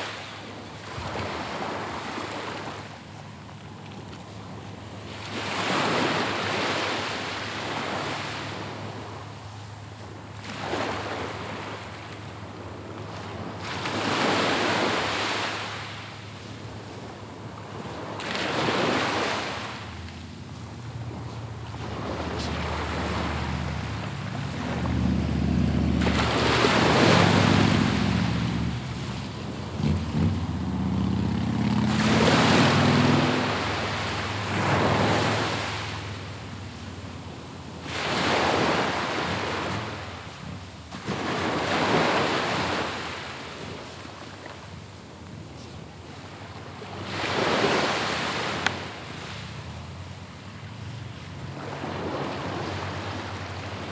S W Coast Path, Swanage, UK - Swanage Beach Walking Meditation
A walking meditation along the seashore, back and forth between the groynes on this stretch of Swanage beach. Recorded on a Tascam DR-05 using the on-board coincident pair of microphones.